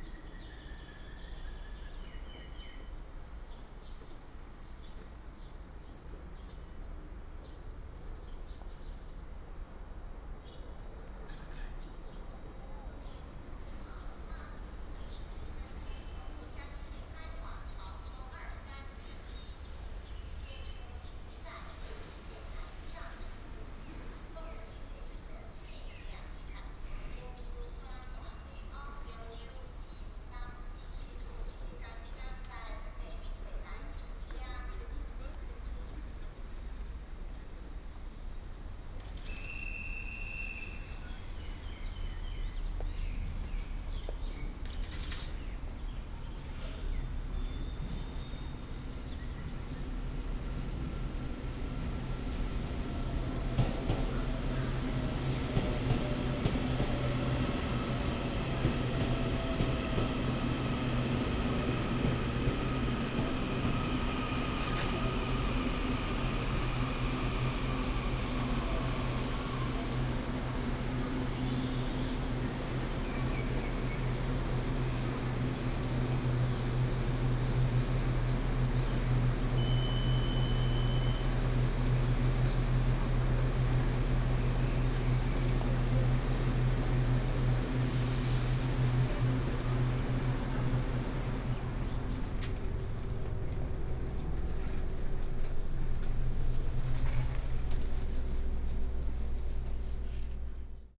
新烏日車站, 烏日區三和里 - At the station platform
At the station platform, Birds call, Station Message Broadcast, The train arrives